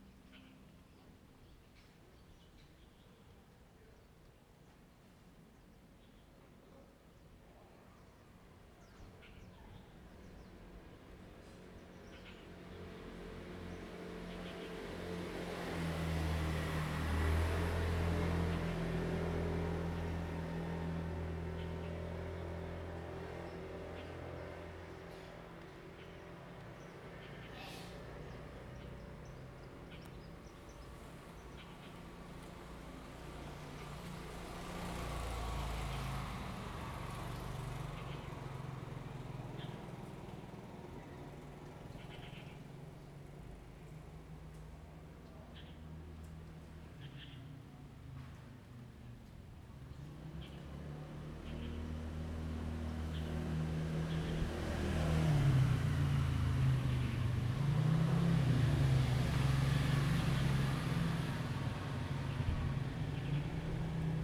Guangfeng Rd., Fengbin Township - Small tribes
In the temple plaza, Birdsong, Traffic Sound, Small tribes
Zoom H2n MS+ XY